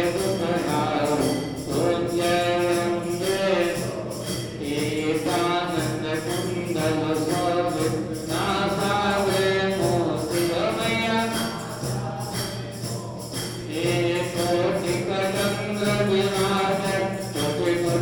Khirki, New Delhi, Delhi, India - Religious ceremony music at Sai Baba temple in Khirki
Recording of religious ceremony music at one of the near-by temples - one of the thousands in Delhi...